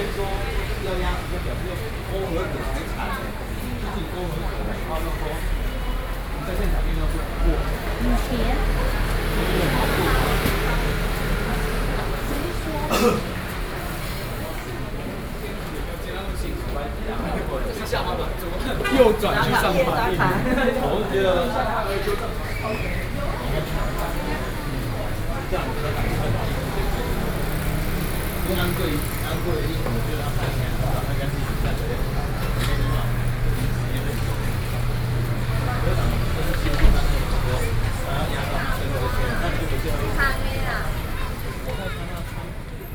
廣州街, Wanhua Dist., Taipei City - SoundWalk